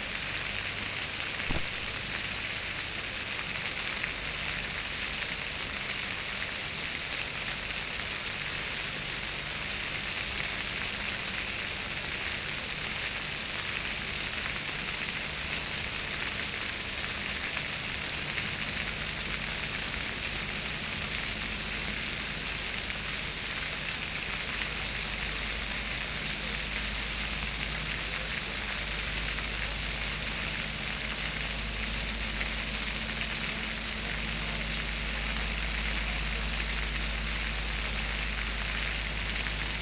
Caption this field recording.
Alnwick gardens ... Torricelli by William Pye ... an installation that shows hydrostatic pressure ... starts at 01:10 mins ... finishes 05:30 ... ish ..? lavalier mics clipped to baseball cap ...